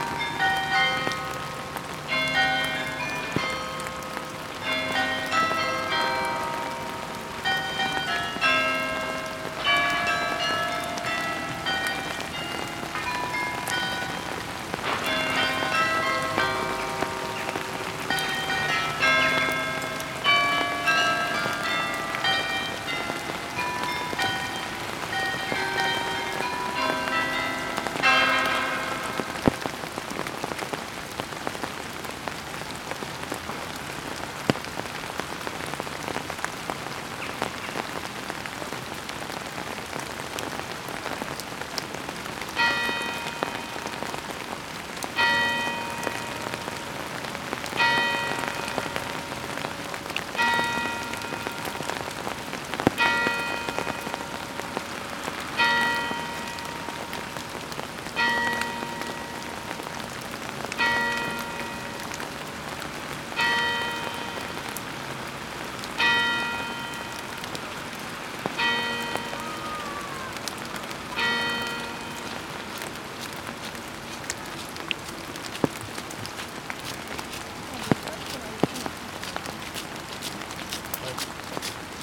Cathédrale Saints Pierre, Paul et Quirin, Malmedy, Belgique - Bells under the rain - cloches sous la pluie
Recorded under an umbrella from above up on the hill.
Light rain.
Tech Note : Sony PCM-D100 internal microphones, wide position.
Wallonie, België / Belgique / Belgien, 4 January, ~12:00